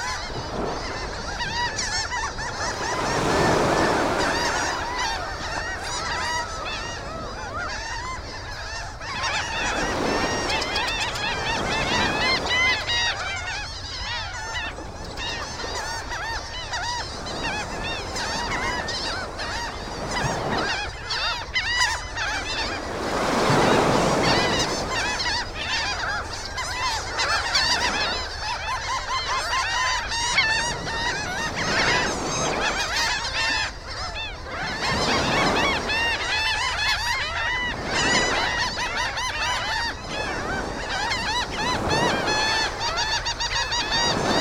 Delaware Bay location (Fortescue, NJ); a sectioned off (protected)beach area for birds migrating up the eastern coast of the USA.
22 May 2017, ~17:00